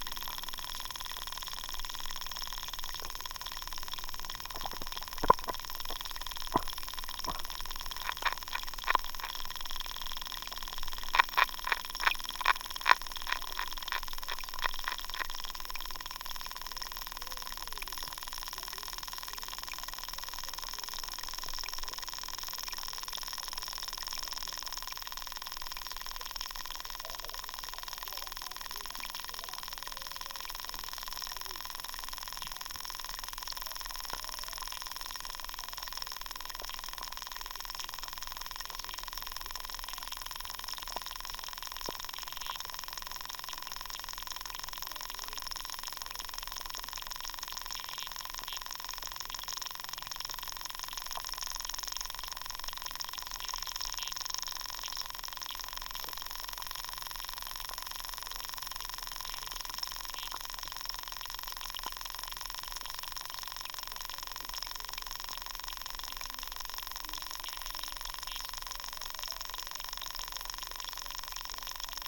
Suvernai, Lithuania, underwater
Underwater activity. Over water kids are heard as well:)